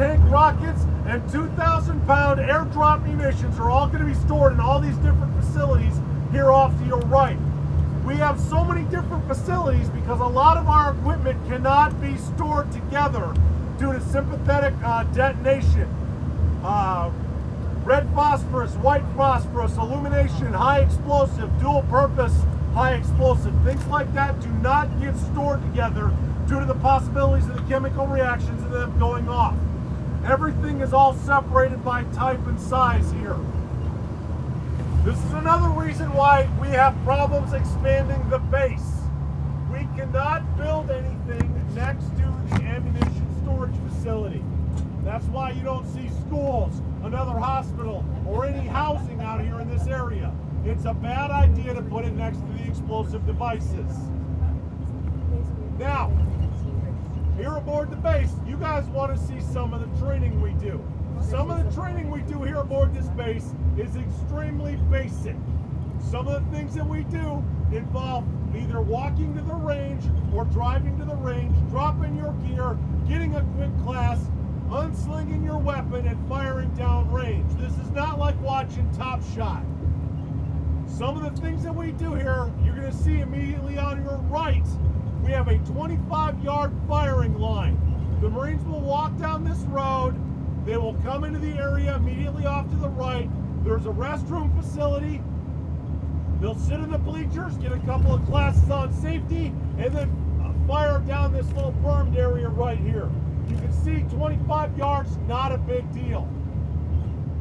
MCAGCC Twenty Nine Palms - Yelling tour MCAGCC Twentynine Palms
Yelling tour on the bus, bouncing across the Mojave sand
CA, USA, 18 April 2012, 09:09